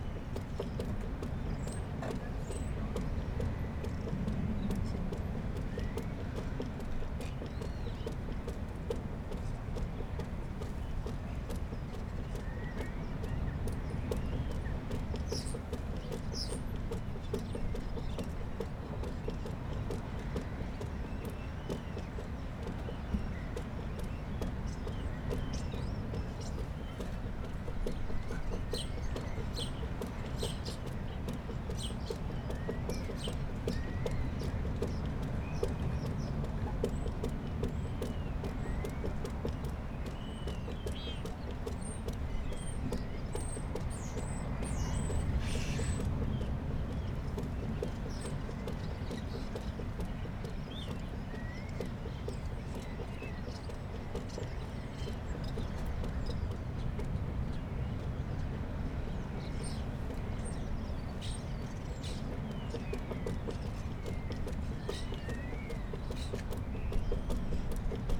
Crewe St, Seahouses, UK - starlings on the harbour light ...
starlings on the harbour light ... dpa 4060s clipped to bag to zoom h5 ... bird calls from ... herring gull ... lesser black-backed gull ... all sorts of background noises ... boats leaving harbour ... flag lanyard bouncing off flagpole ... divers preparing equipment ...
13 November 2021